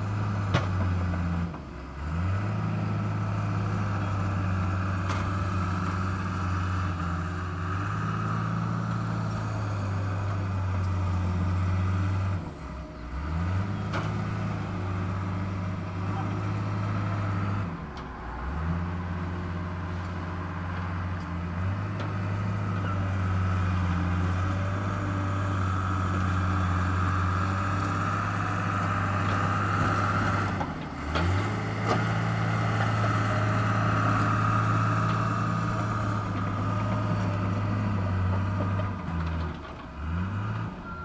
Lake St. near Hopkins Dr., Bear Lake, MI, USA - Last Vestiges of Cook's 66
Heavy Caterpillar machinery finishes demolition work and smooths the soil. A small green and white building, for many years the last remnant of Cook's 66 service station, has been torn down. Stereo mic (Audio-Technica, AT-822), recorded via Sony MD (MZ-NF810, pre-amp) and Tascam DR-60DmkII.
13 March 2016, ~14:00